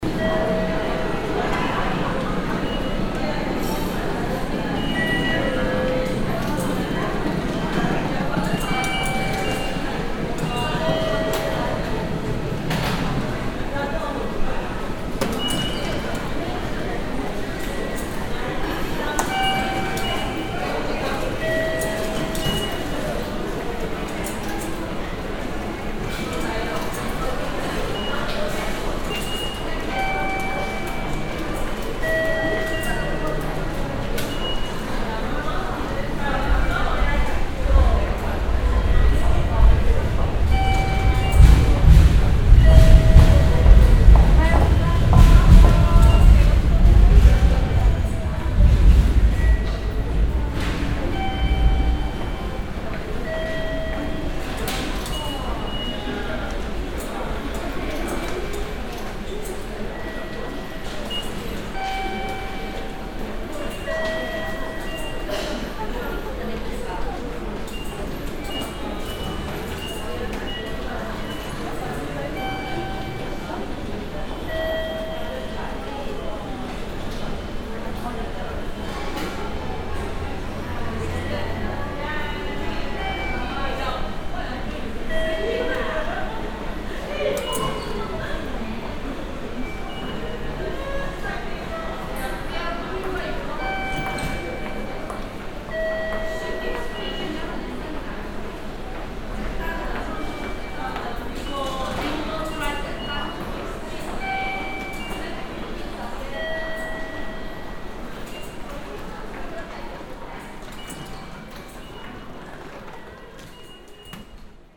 yokohama, train station, ticket gates
At the central train station nearby the train gates with ticket slot machines that travelers need to pass and enter their ticket. A train driving in the station on the upper level.
international city scapes - topographic field recordings and social ambiences
30 June, ~12:00